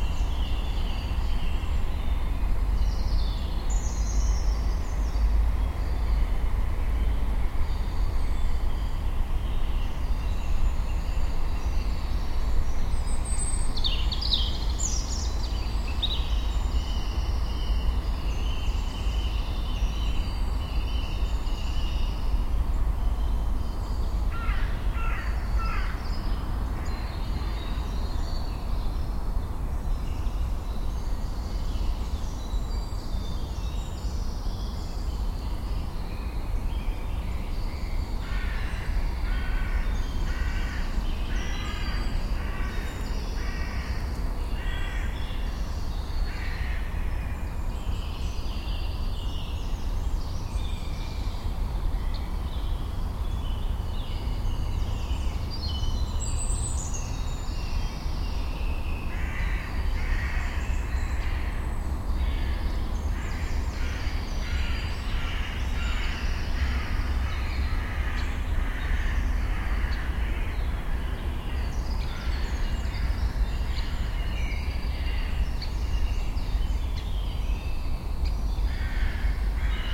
Wik, Kiel, Deutschland - Forest near city
Evening in the forest on the outskirts of Kiel: many birds, a helicopter, one barking dog, some very distant cars, some low frequency rumbling from ships on the nearby Kiel Canal and the omnipresent buzzing of the city and the traffic.
Zoom F4 recorder, two DPA 4060 as stereo couple
Kiel, Germany, 28 March 2017